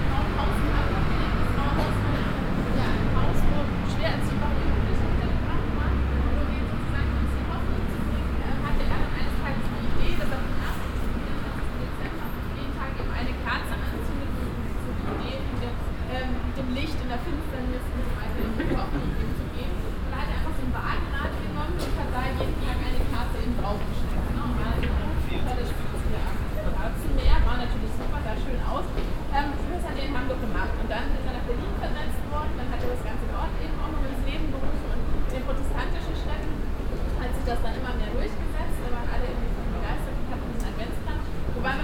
cologne, altstadt, muehlengasse, vor brauhaus peters
abendliche führung für touristen vor dem brauhaus peters - zur geschichte des adventskranzes
soundmap nrw - weihnachts special - der ganz normale wahnsinn
social ambiences/ listen to the people - in & outdoor nearfield recordings